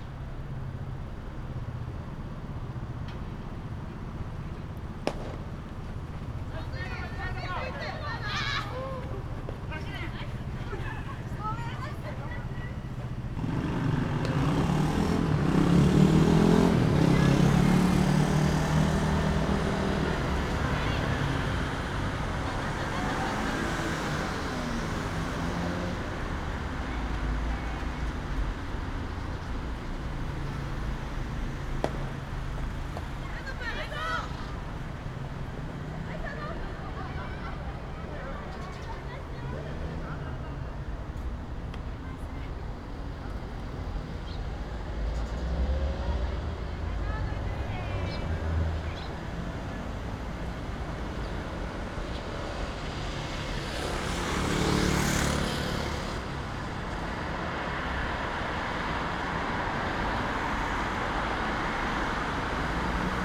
Osaka, near Tennōji Elementary School - basball practice
baseball practice game and harsh pulse of a five line street